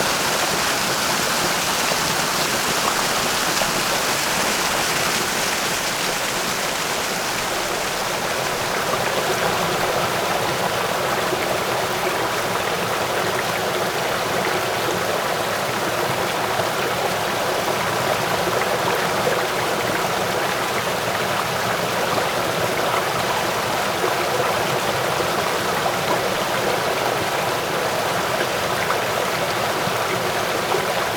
Sec., Balian Rd., Xizhi Dist., New Taipei City - sound of water streams
sound of water streams, Binaural recordings, Sony PCM D50
New Taipei City, Taiwan